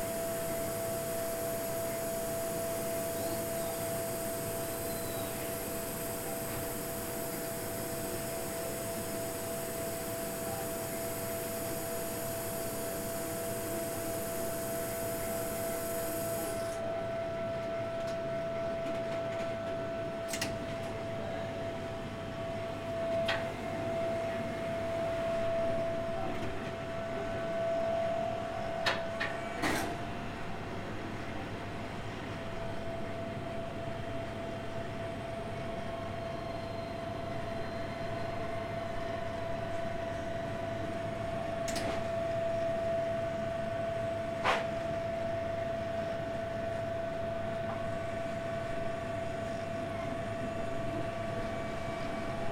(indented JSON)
{
  "title": "Williams Press, Maidenhead, Windsor and Maidenhead, UK - Litho plates being burned",
  "date": "2014-10-02 15:09:00",
  "description": "In this recording, the production manager at Williams Press - Mo - talks through how the Litho-plates are created for the Litho-printing process. She explains that there are four plates per 2-page spread in every book: one for each layer of ink. The sounds you can hear are mostly of the lasers inside the machine burning the impressions for each ink layer, but at the end there is a wondrous metallic sound of the freshly burned plates emerging from the machine with a slight wobble...",
  "latitude": "51.53",
  "longitude": "-0.73",
  "altitude": "30",
  "timezone": "Europe/London"
}